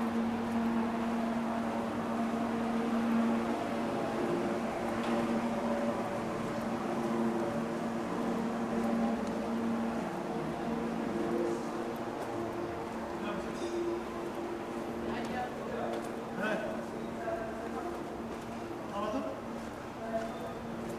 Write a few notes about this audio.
Fullmoon on Istanbul, walking into quieter areas, towards Bomonti.